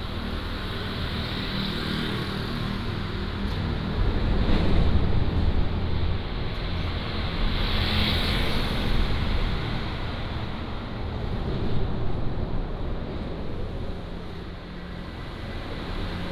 {"title": "Guangfu Rd., 三義鄉廣盛村 - Under the highway", "date": "2017-02-16 11:32:00", "description": "Under the highway, Traffic sound", "latitude": "24.41", "longitude": "120.77", "altitude": "278", "timezone": "GMT+1"}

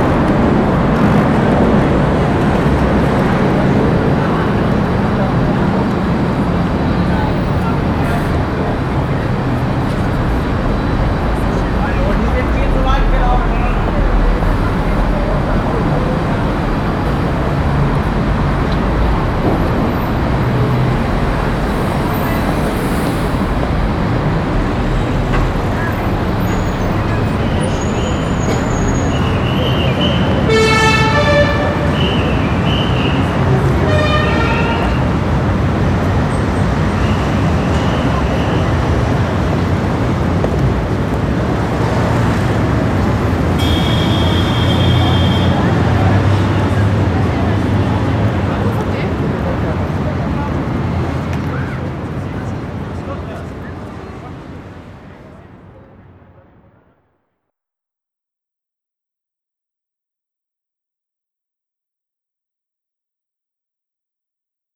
{"title": "Stadtkern, Essen, Deutschland - essen, main station, traffic underpass", "date": "2014-04-08 17:30:00", "description": "In einer Verkehrsunterführung unter den Eisenbahnschienen. Die Klänge von Verkehr und Menschen die vorbeiziehen.\nInside a traffic underpass under the railway tracks. The sounds of traffic and people passing by.\nProjekt - Stadtklang//: Hörorte - topographic field recordings and social ambiences", "latitude": "51.45", "longitude": "7.01", "altitude": "91", "timezone": "Europe/Berlin"}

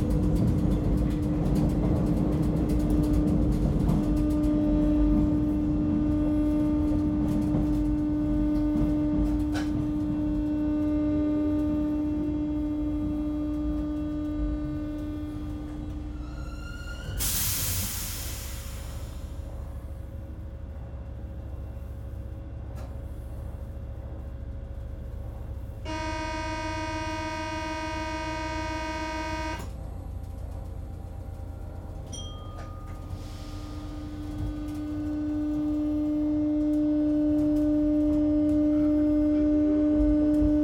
{"title": "Cadet, Paris, France - Empty subway durind the covid-19 curfew in Paris", "date": "2021-01-04 22:33:00", "description": "Night ride in an empty subway during the covid-19 curfew on line 7 from Cadet Station to Palais Royal", "latitude": "48.88", "longitude": "2.34", "altitude": "46", "timezone": "Europe/Paris"}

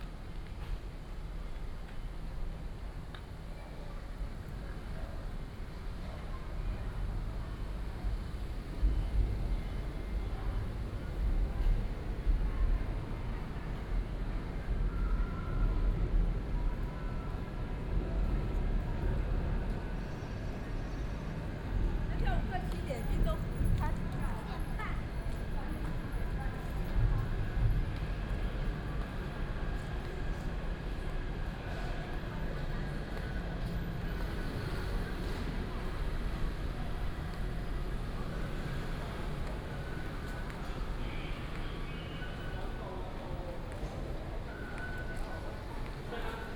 {"title": "Beitou Station, Beitou District, Taipei City - Walking along the bottom of the track", "date": "2015-07-30 19:25:00", "description": "Walking along the bottom of the track, walking into the MRT station, Traffic Sound", "latitude": "25.13", "longitude": "121.50", "altitude": "8", "timezone": "Asia/Taipei"}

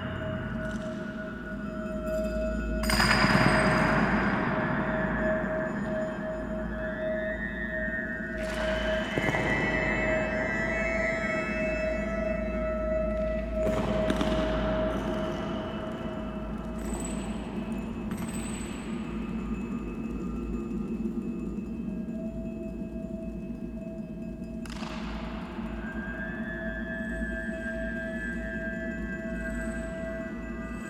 {"title": "Teufelsberg, improvisational session in the sphere 2", "date": "2010-02-04 13:32:00", "description": "open improvisation session at Teufelsberg on a fine winter day with Patrick, Natasha, Dusan, Luisa and John", "latitude": "52.50", "longitude": "13.24", "altitude": "113", "timezone": "Europe/Tallinn"}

